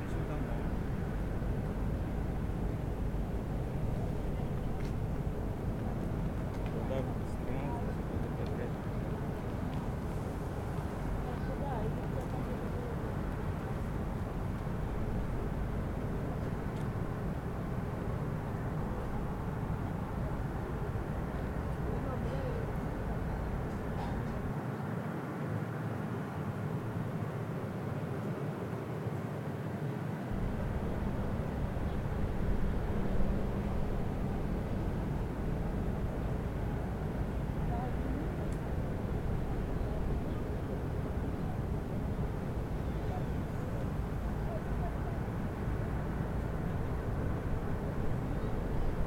Bord du lac d'Aix les Bains, Tresserve, France - Plage du Lido
Le temps est gris, au bord du chemin lacustre réservé aux piétons et cyclistes près de la plage du Lido, bruit de la ventilation du restaurant bar, quelques baigneurs, les passants et la circulation sur la route voisine.